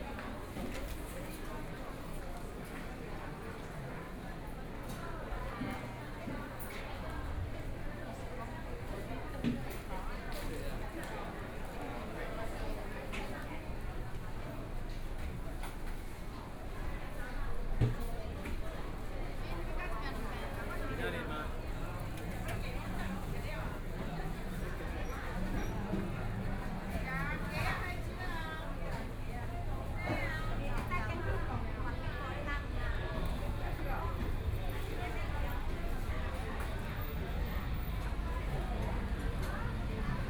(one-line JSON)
{"title": "Taitung City's Central Market - in the market", "date": "2014-01-16 10:15:00", "description": "Walking through the market inside, Traffic Sound, Dialogue between the vegetable vendors and guests, Binaural recordings, Zoom H4n+ Soundman OKM II ( SoundMap2014016 -3)", "latitude": "22.75", "longitude": "121.15", "timezone": "Asia/Taipei"}